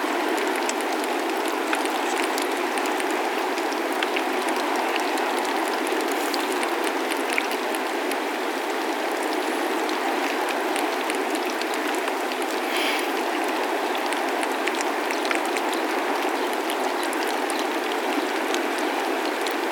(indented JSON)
{
  "title": "Greencastle Pier Rd, Kilkeel, Newry, UK - Kelp & Arctic Terns",
  "date": "2021-06-07 15:25:00",
  "description": "Recorded with a stereo pair of DPA 4060s and a Sound Devices MixPre with the tide coming in over a bed of kelp.",
  "latitude": "54.04",
  "longitude": "-6.11",
  "timezone": "Europe/London"
}